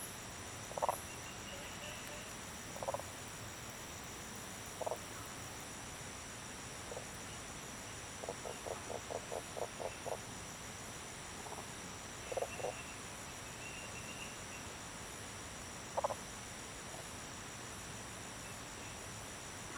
種瓜路, 桃米里 Puli Township - Frogs chirping
Various types of frogs chirping
Zoom H2n MS+ XY
Puli Township, Nantou County, Taiwan, 14 July 2016